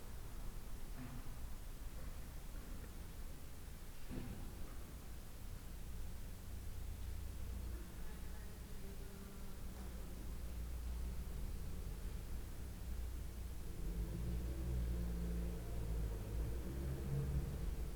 Thomaskirche, Hamm, Germany - Thomaskirche
empty church, wide open doors... Easter stay-at-home...
Nordrhein-Westfalen, Deutschland, 12 April 2020